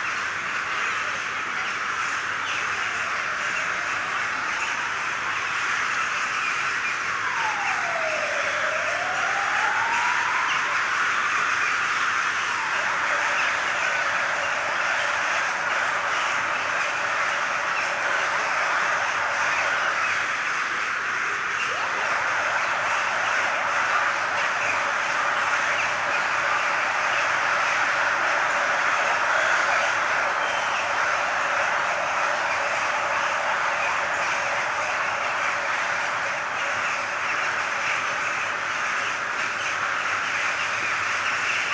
Park Sismigiu, Bucharest - Crows in the park at the end of the afternoon
Hundreds of crows screaming in the park Sismigiu at the end of the afternoon.
Some background noise from the city, sirens sometimes and some people walking around sometimes in the park.
București, Romania, 20 July 2018, 19:00